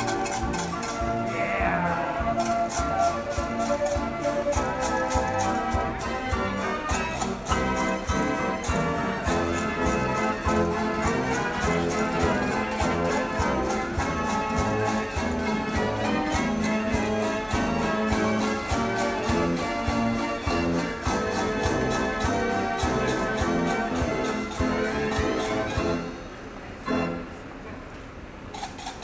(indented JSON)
{
  "title": "Amsterdam, Nederlands - Street musician",
  "date": "2019-03-28 11:45:00",
  "description": "Into a main commercial street of Amsterdam, people playing an harmonium machine. A person seeing I'm recording is trying to destroy the sound waving his thingy, out of spice. The recording is damaged but I thought it was important to talk about it. It's relative to Amsterdam overtourism.",
  "latitude": "52.37",
  "longitude": "4.89",
  "altitude": "3",
  "timezone": "Europe/Amsterdam"
}